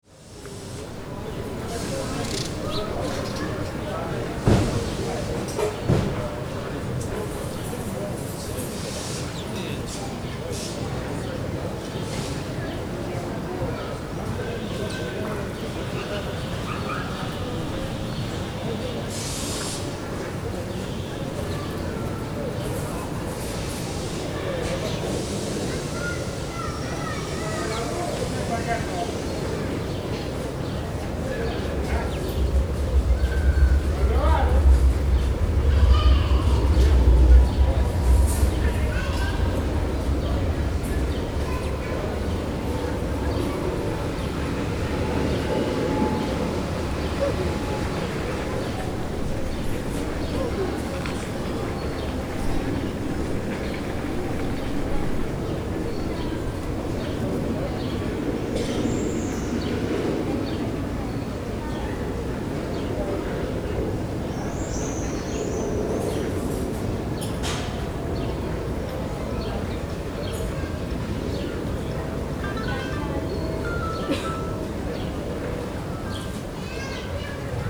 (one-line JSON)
{"title": "Rue de la Boulangerie, Saint-Denis, France - Park opposite Médiatheque Centre Ville", "date": "2019-05-25 11:20:00", "description": "This recording is one of a series of recording, mapping the changing soundscape around St Denis (Recorded with the on-board microphones of a Tascam DR-40).", "latitude": "48.94", "longitude": "2.36", "altitude": "33", "timezone": "GMT+1"}